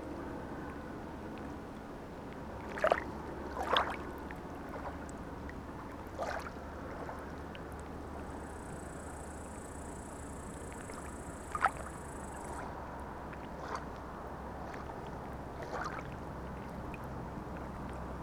Lithuania, Sudeikiai, on the bridge
ambiences with cars passing by
13 September 2011, 11:30